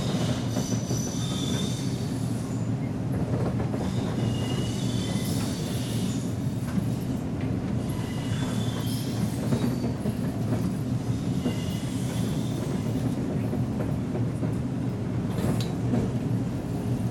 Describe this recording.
Underground until Lemonnier Station. Tech Note : Olympus LS5 internal microphones.